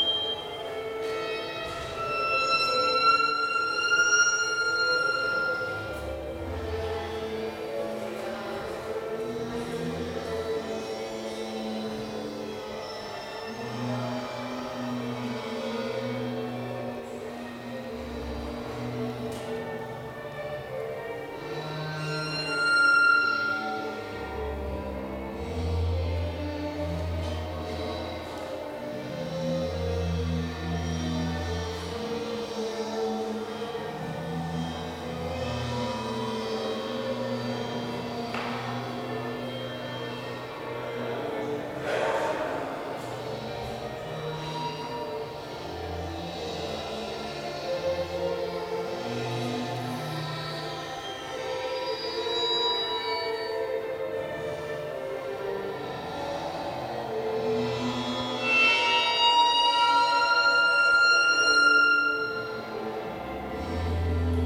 Martin Buber St, Jerusalem - Corridor at Bezalel Academy of Art and Design.
Bezalel Academy of Art and Design.
Gallery, corridor, Sound work.